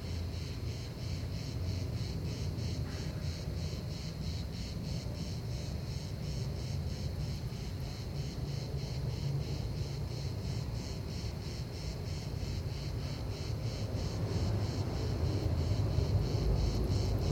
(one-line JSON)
{"title": "Mnt Gilloux, Marseille, France - Marseille - Petit matin au Roucas-Blanc", "date": "2019-08-20 05:30:00", "description": "Marseille\nPetit matin au Roucas blanc - ambiance estivale", "latitude": "43.28", "longitude": "5.37", "altitude": "79", "timezone": "Europe/Paris"}